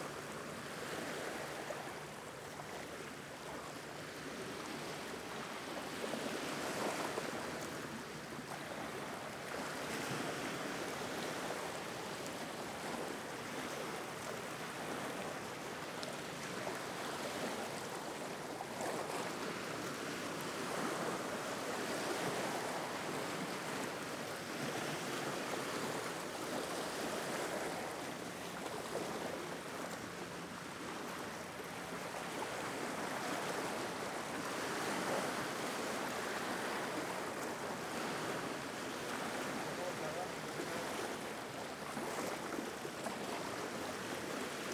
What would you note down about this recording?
Plage de Caliete - Javea - Espagne, Ambiance - 2, ZOOM F3 + AKG C451B